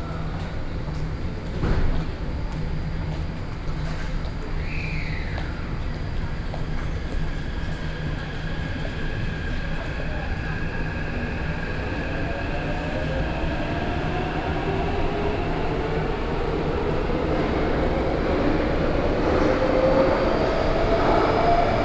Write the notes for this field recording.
in the Platform, Sony PCM D50 + Soundman OKM II